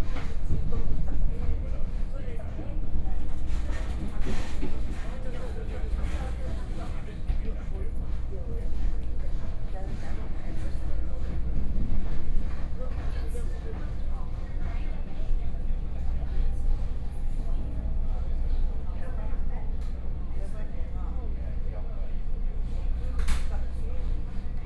Sanyi Township, Taiwan - Local Train
from Tongluo Station to Tai'an Station, Binaural recordings, Zoom H4n+ Soundman OKM II